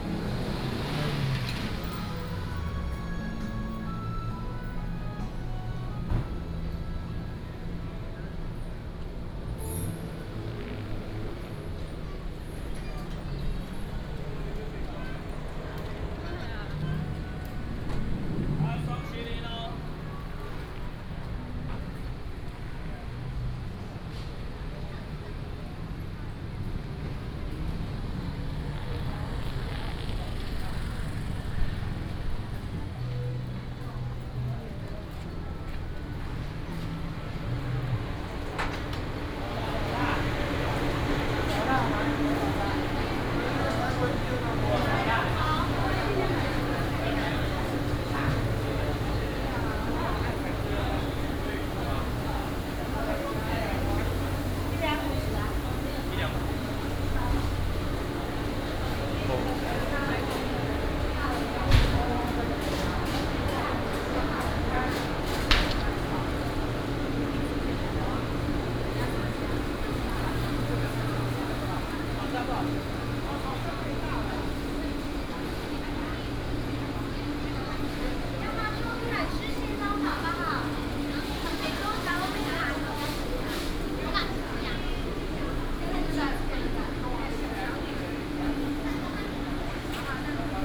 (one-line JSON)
{"title": "南寮觀光漁市, Hsinchu City - Seafood fish market", "date": "2017-08-26 10:40:00", "description": "Seafood fish market, The plane flew through", "latitude": "24.85", "longitude": "120.92", "altitude": "3", "timezone": "Asia/Taipei"}